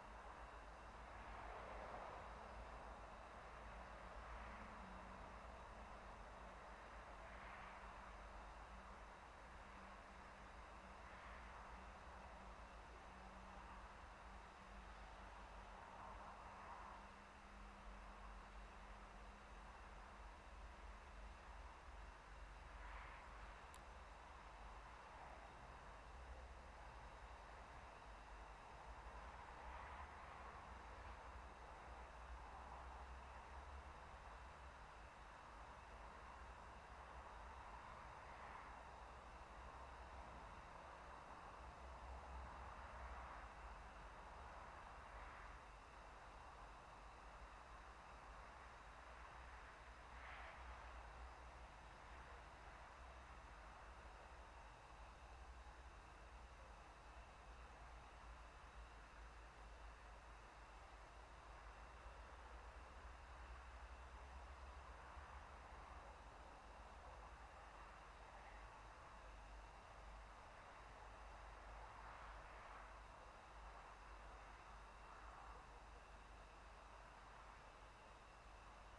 Birds singing, natural gas reduction station drone, cars droning in the distance, passenger train passing by to enter Koprivnica train station. Recorded with Zoom H2n (MS, on a tripod).